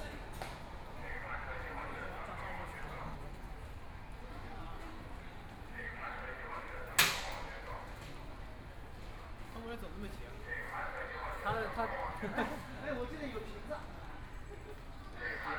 {
  "title": "Yishan Road, Xuhui District - Line 9 (Shanghai Metro)",
  "date": "2013-11-23 15:20:00",
  "description": "from Yishan Road Station to Xujiahui station, Messages broadcast station, walking in the Station, Binaural recording, Zoom H6+ Soundman OKM II",
  "latitude": "31.19",
  "longitude": "121.43",
  "altitude": "5",
  "timezone": "Asia/Shanghai"
}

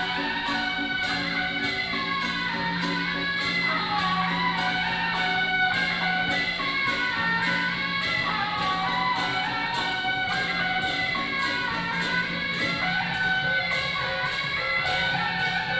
{
  "title": "大仁街, Tamsui District - Traditional temple festival parade",
  "date": "2015-05-08 12:16:00",
  "description": "Traditional temple festival parade",
  "latitude": "25.18",
  "longitude": "121.44",
  "altitude": "45",
  "timezone": "Asia/Taipei"
}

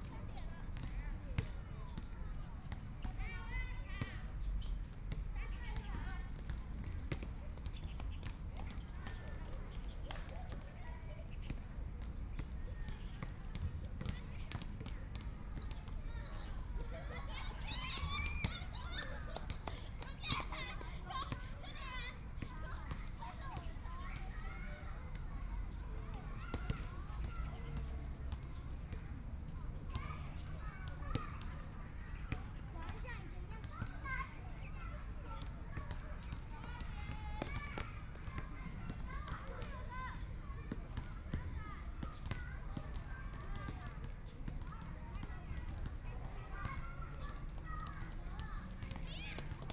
{
  "title": "榮星公園, Zhongshan District - Play basketball",
  "date": "2014-01-20 15:09:00",
  "description": "elementary school students playing basketball, Traffic Sound, the sound of the Kids playing game, Binaural recordings, Zoom H4n+ Soundman OKM II",
  "latitude": "25.06",
  "longitude": "121.54",
  "timezone": "Asia/Taipei"
}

